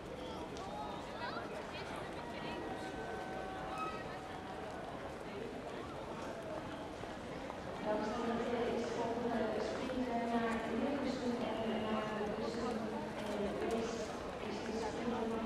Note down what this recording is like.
recorded on a bench, microphone next to the floor - after the sound next to this one...